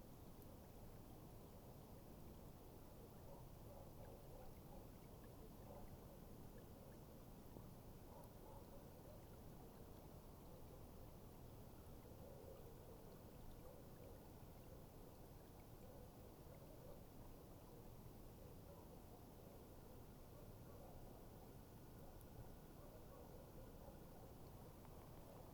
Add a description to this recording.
first evening without wind. storm is still